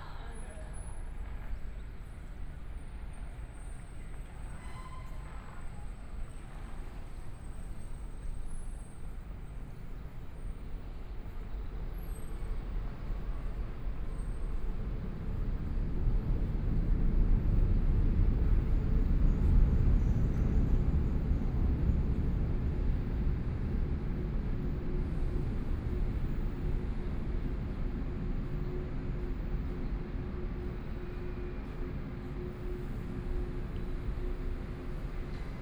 Dongshan Station, Yilan County - In the station hall
In the first floor lobby of the station, At the top of the track, After the train arrived at the station, Again, off-site Binaural recordings, Zoom H4n+ Soundman OKM II
Yilan County, Taiwan